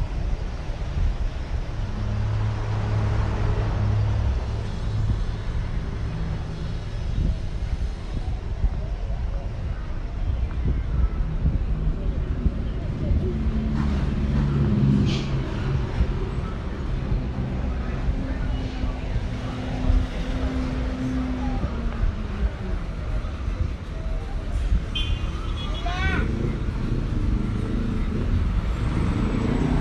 Cl., Bello, Antioquia, Colombia - Ambiente Autopista
Información Geoespacial
(latitud: 6.333717, longitud: -75.558393)
Autopista, Bello. Antioquia
Descripción
Sonido Tónico: Carros pasando
Señal Sonora: Motor arrancando
Micrófono dinámico (celular)
Altura: 1 metro
Duración: 3:01
Luis Miguel Henao
Daniel Zuluaga
28 October 2021